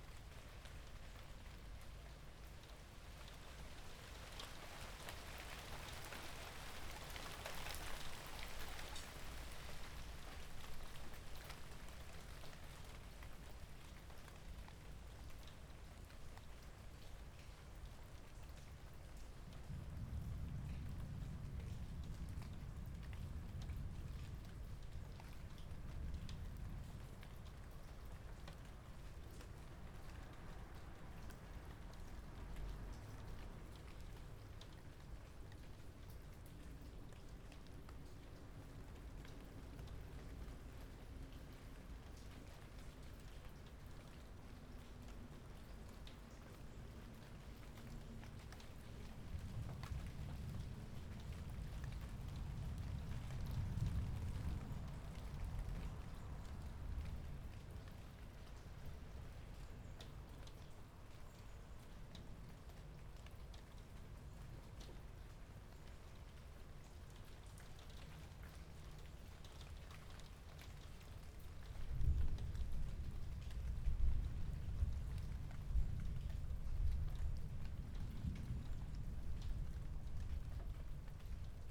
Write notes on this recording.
daybreak around 5 AM on World Listening Day 2014, Roland R-9, electret stereo omnis, out an upstairs window onto back gardens in S London